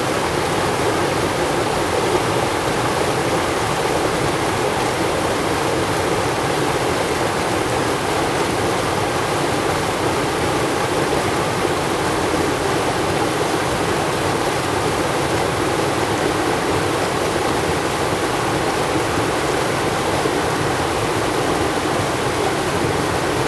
猴洞坑溪, 礁溪鄉白雲村 - stream
stream
Zoom H2n MS+ XY
Jiaoxi Township, Yilan County, Taiwan, 7 December 2016